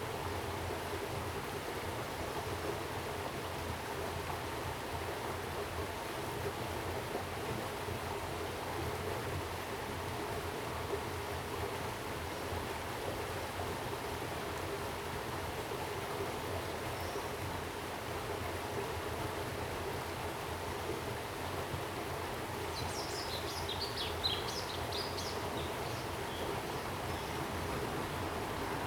草湳橋, 埔里鎮桃米里 - stream
stream
Zoom H2n MS+ XY